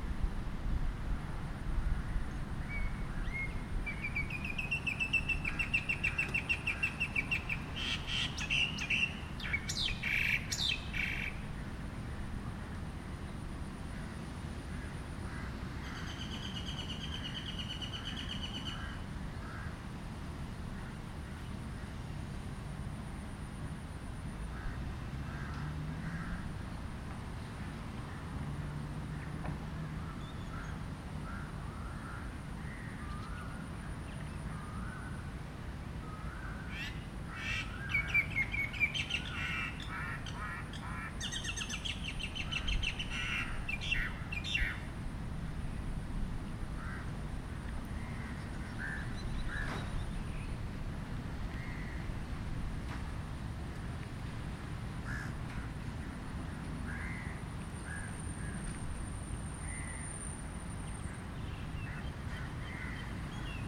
a sunny afternoon in ambang botanic, some birds, some cars, some wind